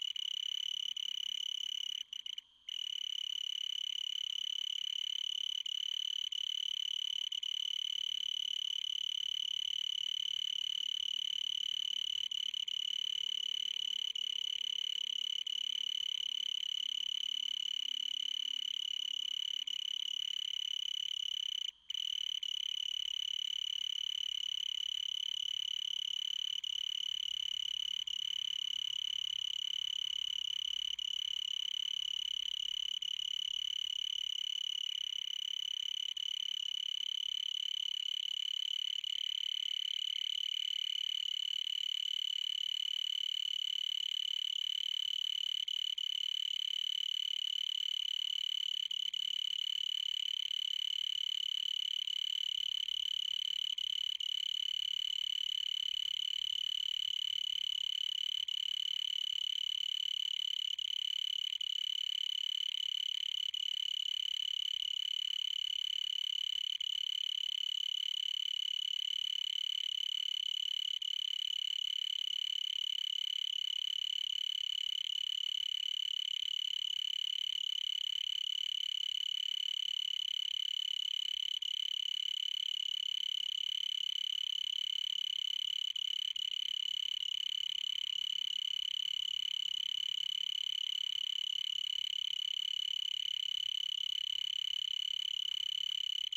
Cicadas with strong sound, after the rain, in park. Recorded with Zoom H5 (MHS6 - XY stereo head).
Área Metropolitana de Lisboa, Portugal